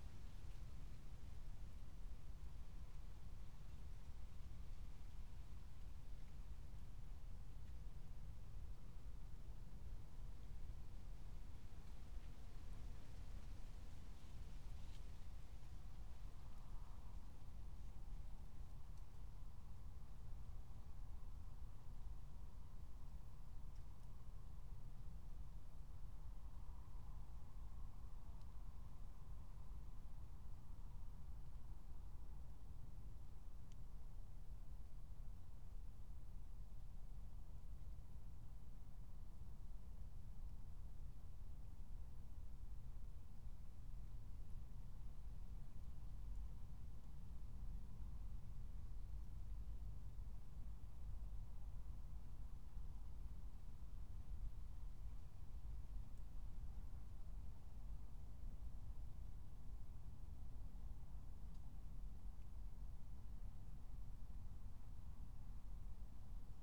Berlin, Tempelhofer Feld - former shooting range, ambience
01:00 Berlin, Tempelhofer Feld
Deutschland, 2 June 2020, 01:00